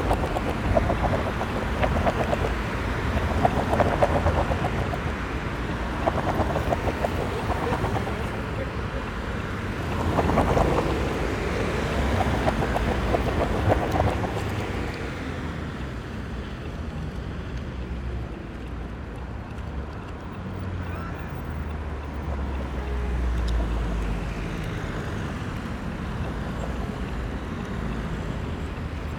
One of the busiest corners in Berlin. Trams rumble and clatter heavily across steel rails, tyres flap rhythmically over the tram tracks, cyclists pass in droves, pedestrians wait patiently. All movements are controlled by the lights that tick, red, yellow, green, directions, speeds and timings. It looks fluid, but is very disciplined. Almost everyone does exactly as expected. Impressive social/cultural agreement found less in other cities.
9 September 2021, 17:19